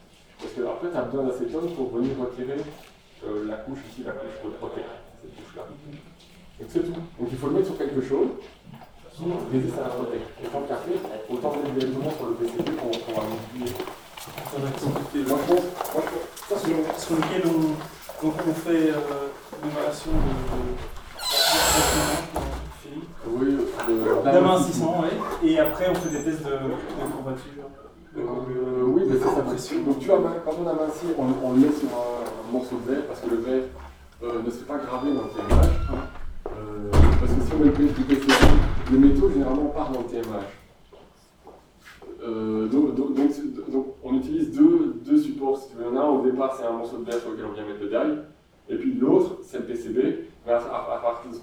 Quartier du Biéreau, Ottignies-Louvain-la-Neuve, Belgique - Technical debate
Two persons discussing in the corridors, about something complicate and very technical. I seems it's about three-dimensional printers.
Ottignies-Louvain-la-Neuve, Belgium, 11 March 2016, ~14:00